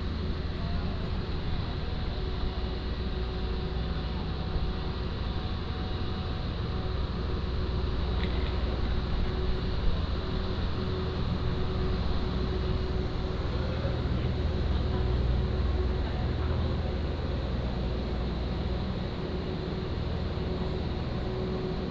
Kinmen Airport, Taiwan - Walking in the airport
From the airport departure lounge, Towards the airport and into the cabin
金門縣 (Kinmen), 福建省, Mainland - Taiwan Border, 2 November 2014